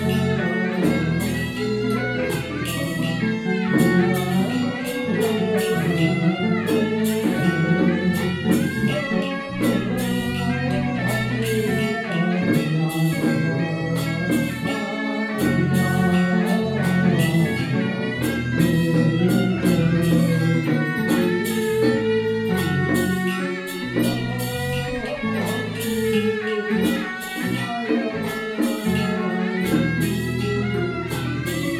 Qingshui District, Taichung - funeral ceremony
Traditional funeral ceremony in Taiwan, Sony PCM d50 + Soundman OKM II